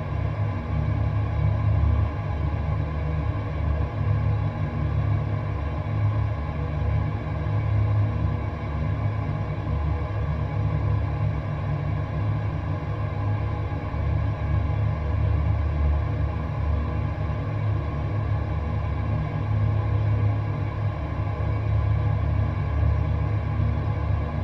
Kavarskas, Lithuania, dam bridge drone
contact microphones on a dam bridge